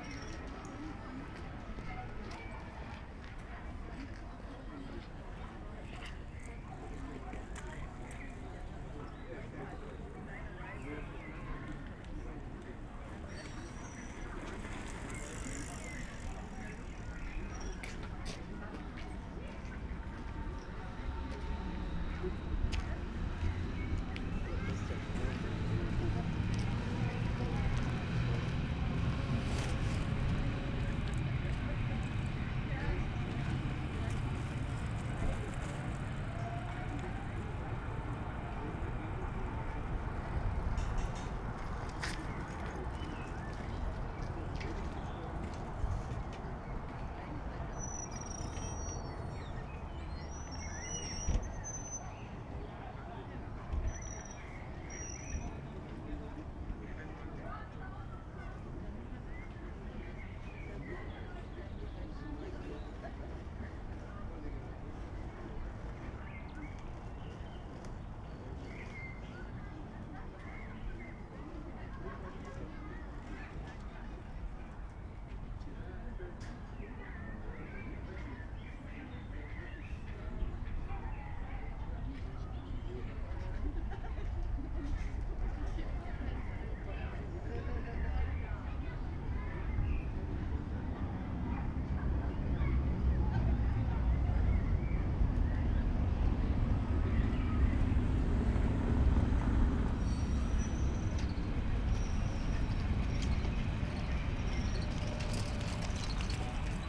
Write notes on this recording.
S-Bahn station Pristerweg looks like from some decades ago. On a warm spring early evening, some people gathering in a Biergarten pub, many cyclists passing by, some trains above. (Sony PCM D50, Primo EM172)